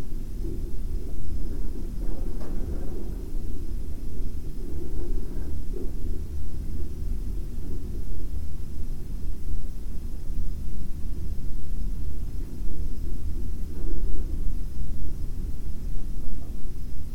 Hotel a televizní vysílač Ještěd, Liberec, Česko - Ještěd
The sound of wind coming from the ventilation in the hotel room and the Ještěd transmitter.
Severovýchod, Česko, 22 February, ~22:00